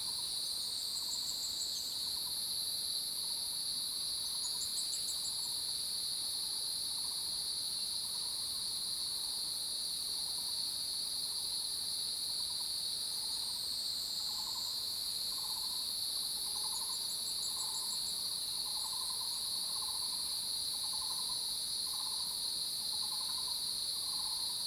油茶園, 魚池鄉五城村, Nantou County - Cicada sounds
early morning, Birds and Cicada sounds